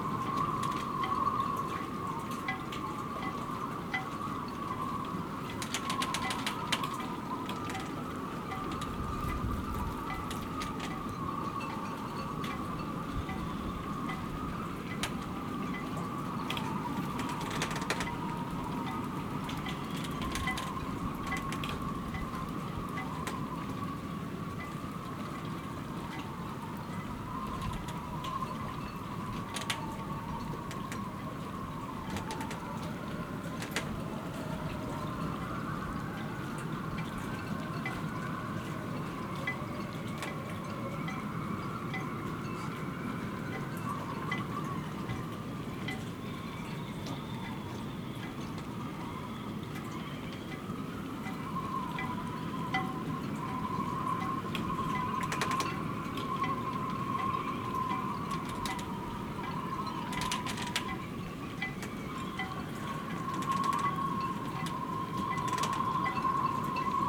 Ved Fjorden, Struer, Dänemark - singing ropes marina Struer
The singing of the ropes of the sailboats in the marina of Struer in the strong wind
iPhone 11 ambeo binaural sennheiser
Danmark, 26 August 2021, ~21:00